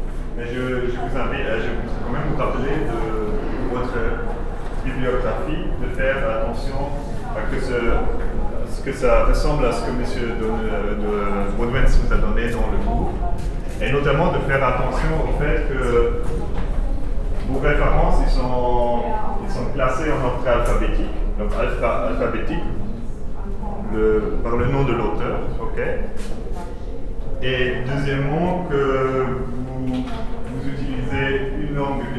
Quartier des Bruyères, Ottignies-Louvain-la-Neuve, Belgique - A course of legal matters
In the faculty of law, a course of legal matters.
Ottignies-Louvain-la-Neuve, Belgium